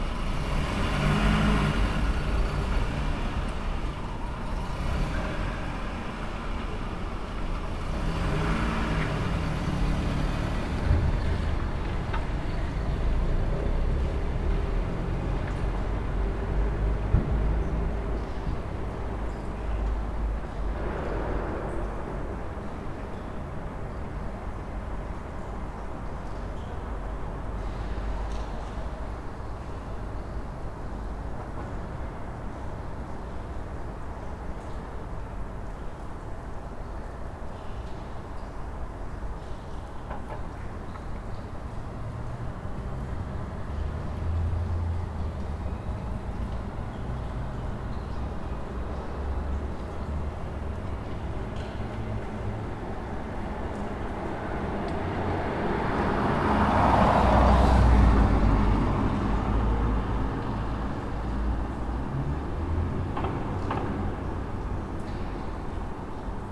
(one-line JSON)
{"title": "Šiaulių g., Kaunas, Lithuania - Near unfinished construction site", "date": "2020-03-04 20:00:00", "description": "Recording near an unfinished building. Water dripping and general ambience of the construction site is heard together with distant traffic and cars passing by. Recorded with ZOOM H5.", "latitude": "54.89", "longitude": "23.93", "altitude": "29", "timezone": "Europe/Vilnius"}